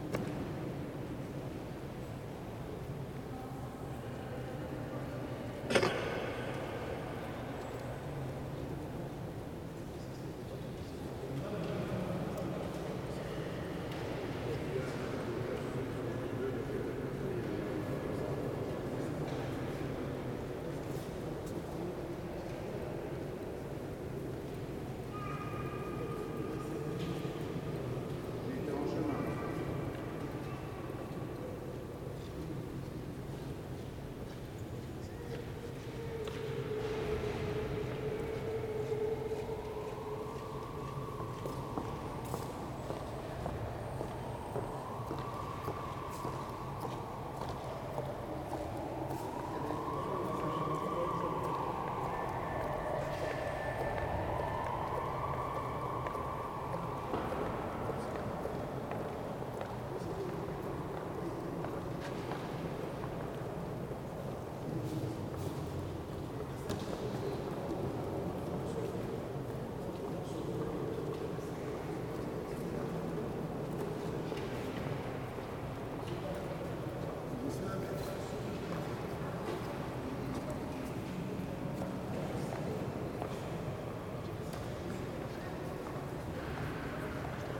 Brussels, Belgium - Listening in the Palais de Justice
Balcony inside the building, a nice listening situation. I simply placed 2 omni-directional Naiant X-X mics perhaps 2 feet apart on the balcony, and listened to how the peoples' footsteps - as they passed below - excited the resonances of the very echoey space.
België - Belgique - Belgien, European Union, 20 June, ~13:00